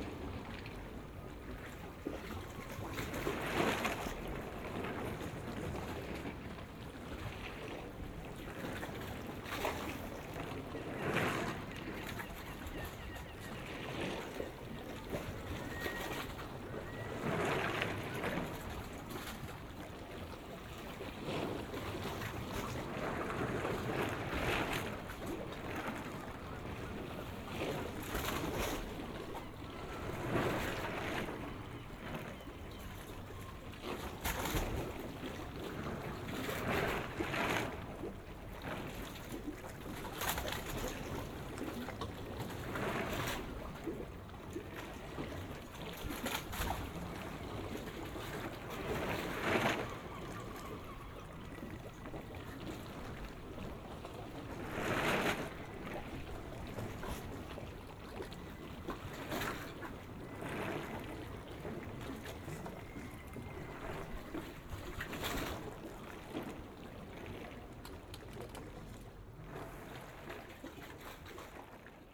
Ustka, Polska - in the port Ustka - binaural
moored yachts and smaller vessels in the port Ustka. Binaural records, please listen on headphones
Ustka, Poland